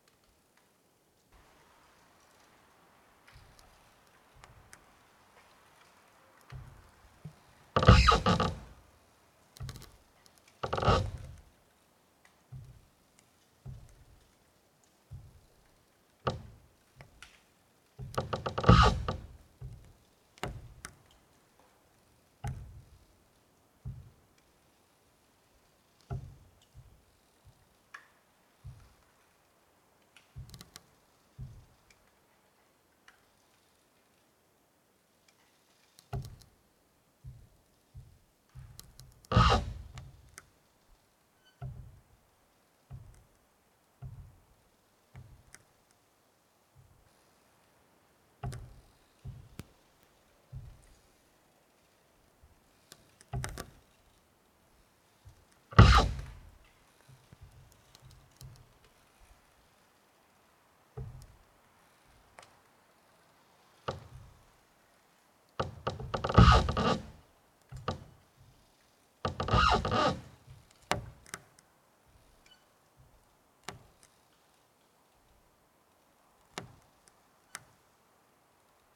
Lithuania, Utena, tree that speaks
another tree in wind that loudly proclaims its rights